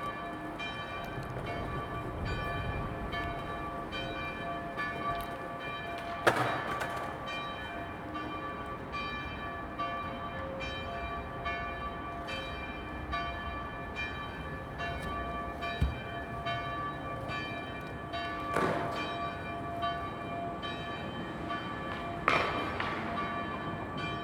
Národní, Praha-Nové Město, Czechia - Noon bells from the Saint Voršila monastery on the Václav Havel square
The bell from the turret of the nearby monastery of Saint Voršila sounded today unusually clear, accompanied by a steady rumbling of a lonesome skater and sometimes intervened by deep humming of almost empty trams.
Hlavní město Praha, Praha, Česká republika, 22 March 2020